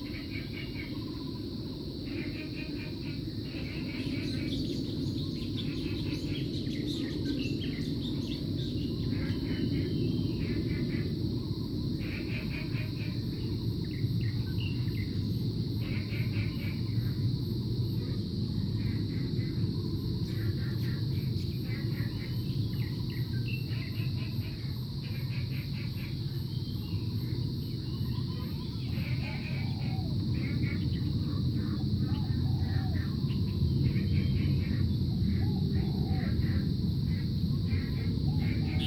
Zhonggua Rd., 桃米里 Puli Township - Early morning
Early morning, Frogs sound, Bird calls, Aircraft flying through, Bird calls
Zoom H2n MS+XY
Nantou County, Taiwan, 10 June, ~06:00